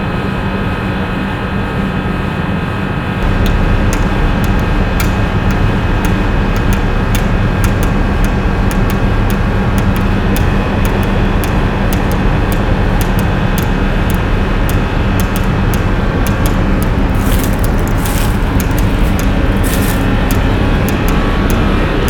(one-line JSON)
{"title": "University of the Witwatersrand, Johannesburg, South Africa - Substation", "date": "2014-06-21 06:18:00", "description": "Early morning recording at Wits University, Substation.", "latitude": "-26.19", "longitude": "28.03", "altitude": "1758", "timezone": "Africa/Johannesburg"}